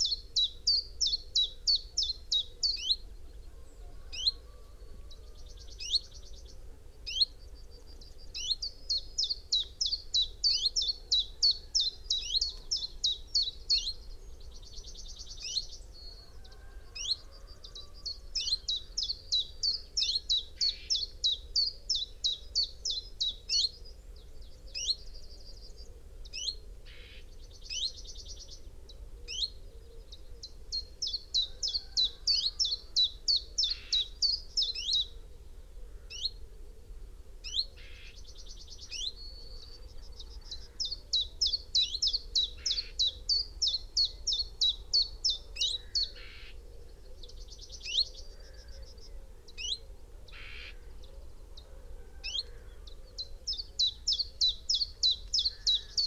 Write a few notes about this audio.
chiffchaff nest site ... male in tree singing ... female calling as she visits nest with food ... possibly second brood ... xlr sass on tripod to zoom h5 ... bird calls ... song ... from ... yellowhammer ... dunnock ... eurasian wren ... whitethroat ... carrion crow ... pheasant ... quail ... herring gull ... background noise ...